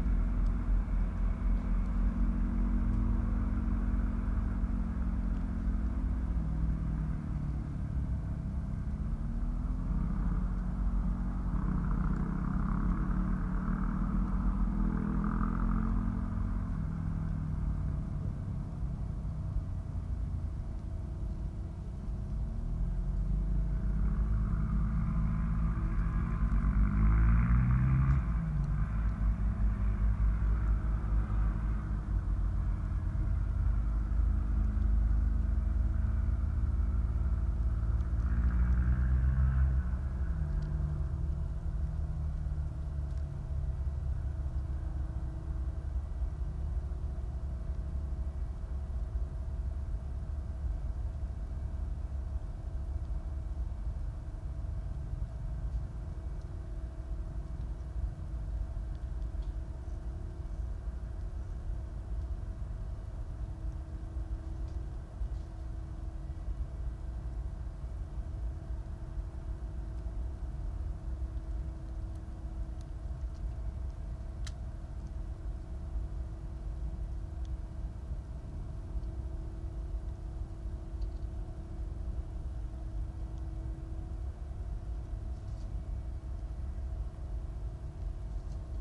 We were all extremely surprised (alarmed even) when this huge fossil fuel machine moved closer and closer to the mics and then receded. Nobody know what it is (probably a large tractor). It led to much speculation in the chat. Other works sounds are audible in the far distance - also unknown. There is no wind, the generator is not rotating, but still hums continuously and twangs occasionally.
24 March 2021, 19:15